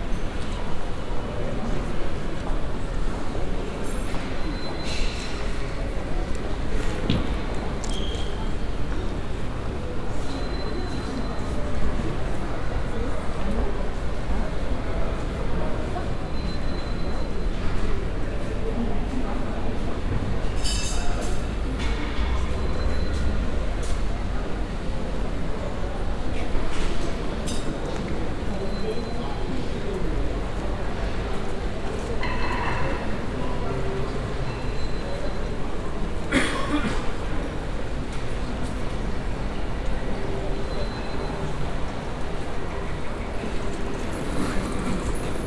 paris, centre pompidou, main hall
ambience in the huge main hall of the centre pompidou. a constant digital beep and steps and voices in the wide open glass wall and stone floor reverbing hall. at the end moving stairs to the first floor level.
international cityscapes - sociale ambiences and topographic field recordings
Paris, France